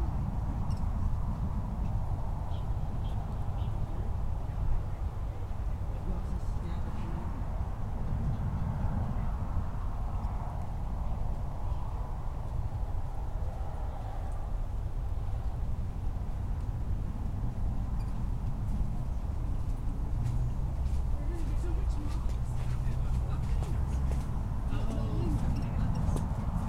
Marsh Boardwalk, St Helena Island, SC, USA - Marsh Boardwalk Trail
A recording made to the side of a boardwalk trail that passes over a marsh. Cars can be heard passing over the bridge to Fripp Island (Tarpon Blvd.) to the left, and multiple people pass by the recording location on the right. The ambience is quiet, with most sounds being quite distant.
[Tascam DR-100mkiii & Primo EM-272 omni mics]
South Carolina, United States, 26 December 2021